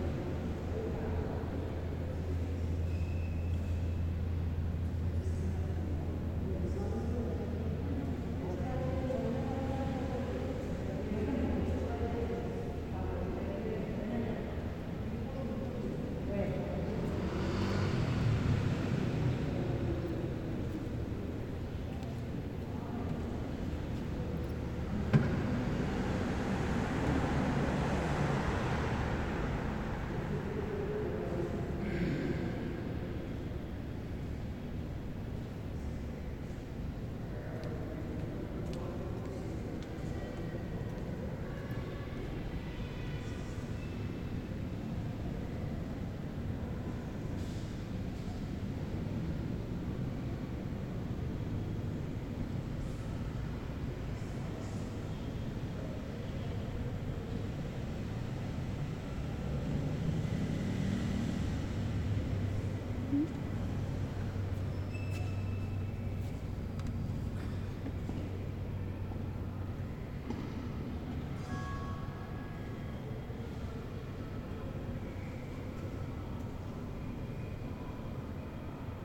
Cl., Medellín, Antioquia, Colombia - Iglesia Santo Cura de Ars
Iglesia con poca gente
Sonido tónico: Carros y motos pasando, Personas hablando.
Señal sonora: Bocina de motos, Sirena de un carro policial, Puerta de un cajón, Pasos.
Se grabó con el micrófono de un celular.